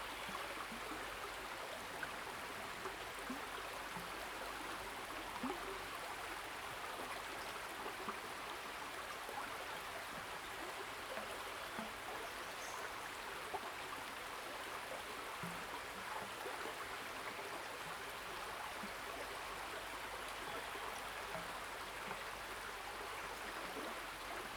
Zhonggua Rd., Puli Township - On the river bank
Stream, River and flow
Zoom H2n MS+XY
Nantou County, Taiwan, 28 April 2016